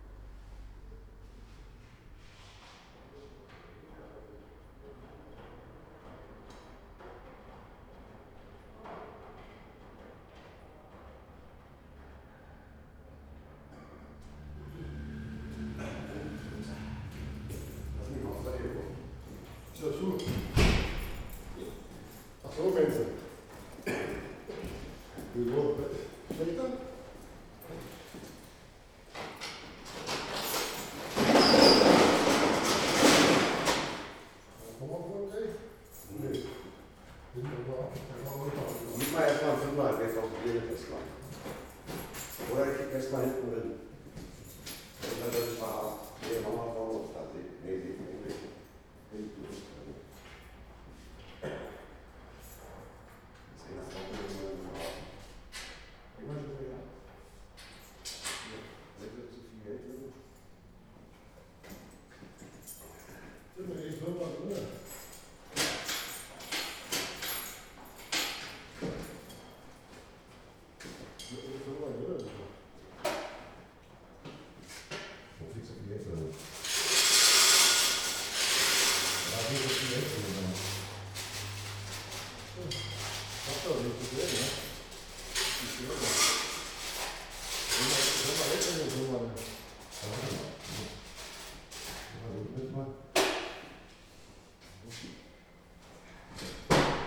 berlin, ohlauer str., waschsalon - laundry ambience
Berlin Ohlauer Str, Wachsalon / laundry morning ambience, technicians testing cash machine
(Zoom H2)